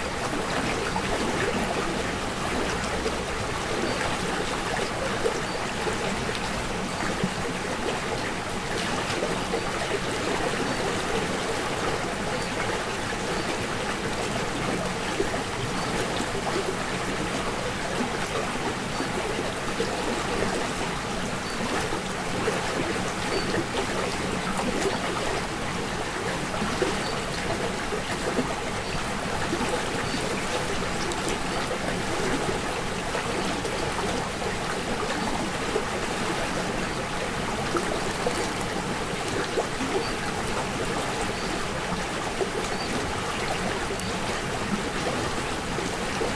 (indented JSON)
{
  "title": "Ardeshir Palace, Fars Province, Iran. - Stream by the Ardeshir Palace",
  "date": "2019-01-02 14:00:00",
  "description": "Stream flowing from a pond in the garden of the ruins of the Ardeshir Palace.",
  "latitude": "28.90",
  "longitude": "52.54",
  "altitude": "1356",
  "timezone": "Asia/Tehran"
}